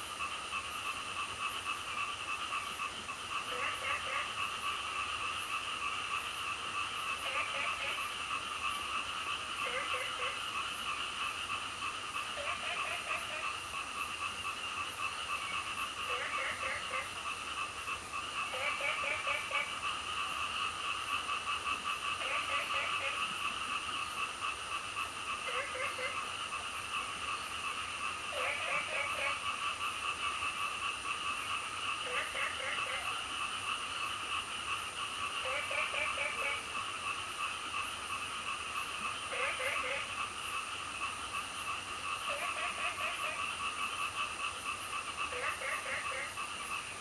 中路坑溪, 桃米里 Puli Township - Stream and Frog Sound
Stream, Frog Sound, late at night
Zoom H2n MS+XY